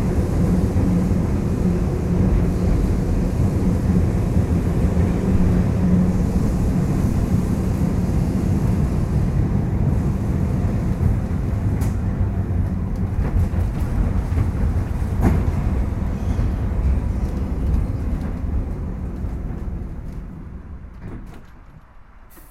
Porto, tram 18
a trip on tram 18